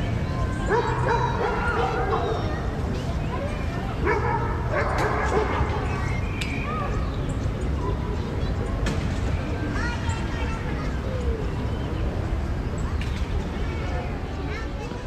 El Parque de Los Azules is a famous neighborhood park with a great variety of elements for recreation, it’s a place where many children and young people go to have fun. The fundamental sound is the sound of traffic, because despite being a quiet park, the distance between it and Highway 30 is five blocks, therefore, the sound of traffic is very noticeable, especially in low frequencies. As a sound signal we find the singing of birds, the barking of dogs and the passing of bicycles. The characteristic sound mark of the place are the screams of children, who shout recognizable words in Spanish. You hear the word "tapabocas" a couple of times, this is an important factor, knowing that we are in 2021.
27 May 2021, 15:00